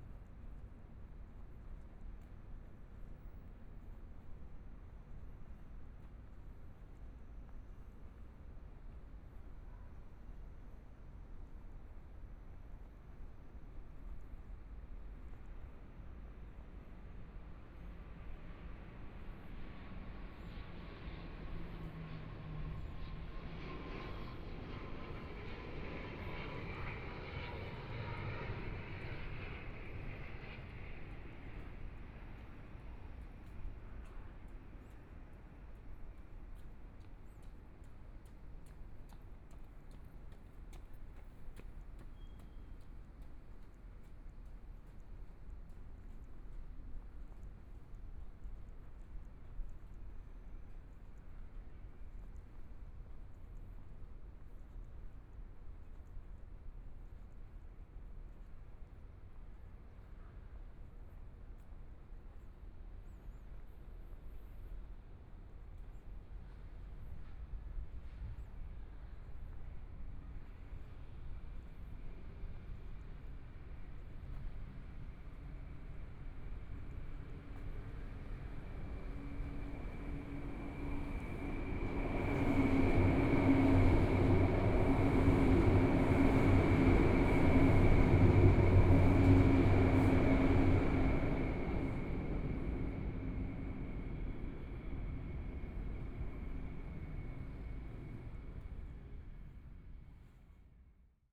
Fushun St., Taipei City - Walking in the small streets
MRT train sounds, Traffic Sound, Walking in the small streets, Binaural recordings, Zoom H4n+ Soundman OKM II